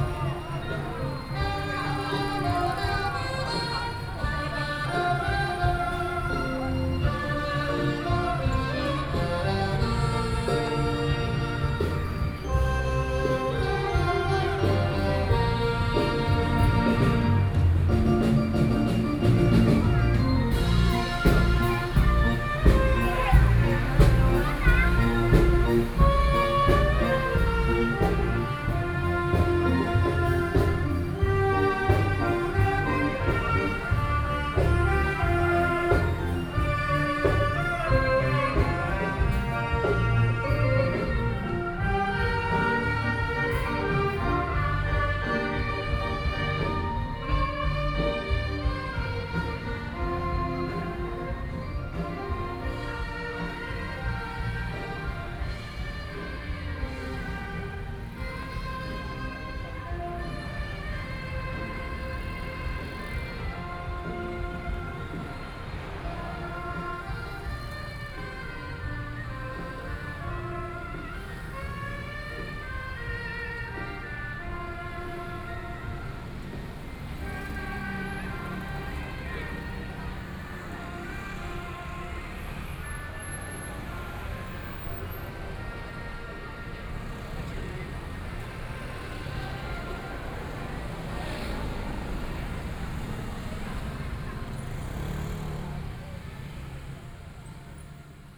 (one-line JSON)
{"title": "Guanghua Rd., Luzhou, New Taipei City - Traditional temple Festival", "date": "2013-10-22 17:00:00", "description": "Traditional temple Festival, Binaural recordings, Sony PCM D50 + Soundman OKM II", "latitude": "25.08", "longitude": "121.47", "altitude": "10", "timezone": "Asia/Taipei"}